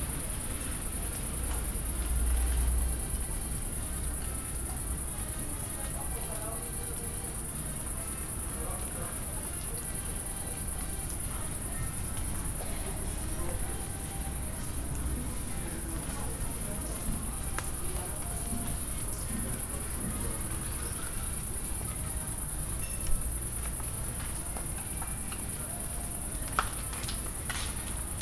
Poznan, Jana III Sobieskiego housing estate - in front of pizza place
recorded in front of pizza place. staff taking phone orders and serving customers. TV on. damp evening, rain drops falling from trees.
14 July, 21:44